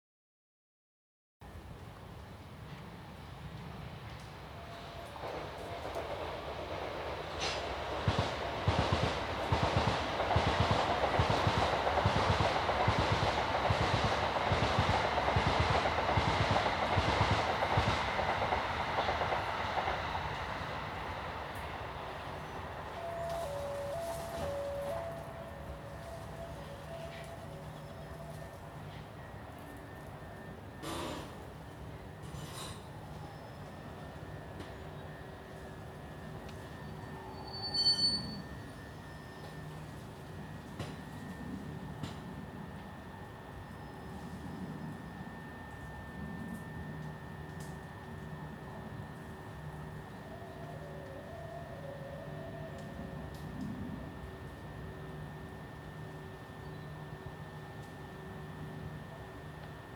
Palace View, London, UK - Train Tracks and Early Autumn at the Grove Park Nature Reserve
This recording was made at the Grove Park Nature Reserve. Passing trains at the nearby Hither Green junction provide a clickety-clack background to frolicking squirrels, squeaking gates and wailing children. Recorded on a ZOOMQ2HD